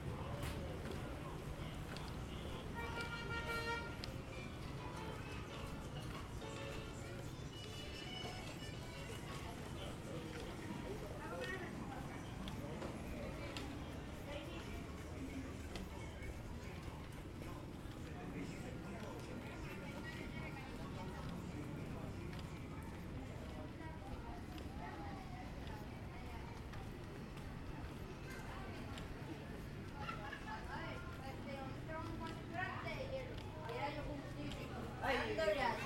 Chigorodó, Chigorodó, Antioquia, Colombia - Se vino la lluvia
Getting caught by the rain while walking from the school to the hotel. The rain came in, remained and kept going.
Zoom H2n
Stereo Headset Primo 172